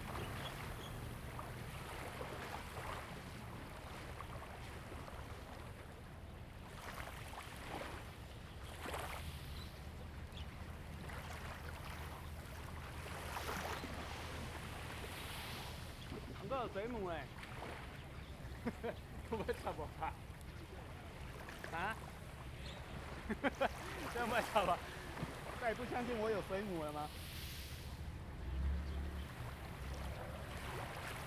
杉福漁港, Liuqiu Township - Small beach
Small beach, Sound of the waves, Diving Exercises
Zoom H2n MS+XY
Pingtung County, Taiwan, 1 November 2014